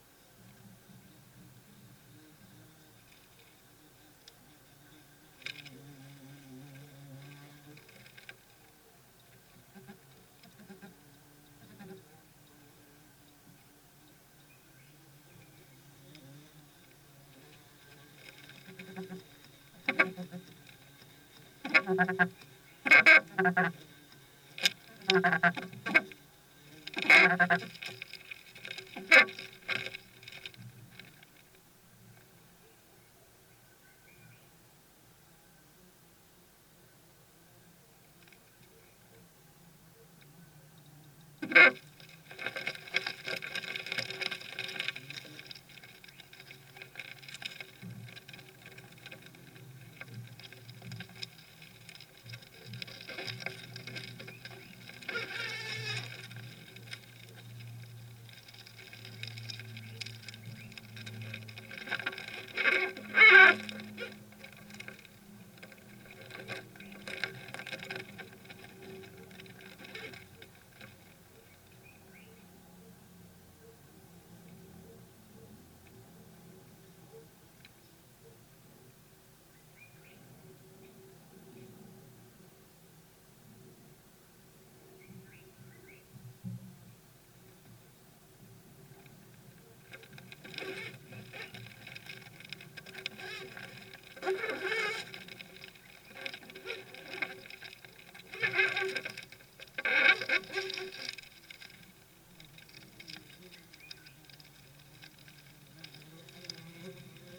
Linden, Randburg, South Africa - Beetles at work in the woodwork!
Beetles coming and going to their nest in the woodwork of the patio awning. Piezo contact mics to Sony ICD-UX512
6 November 2016, 18:30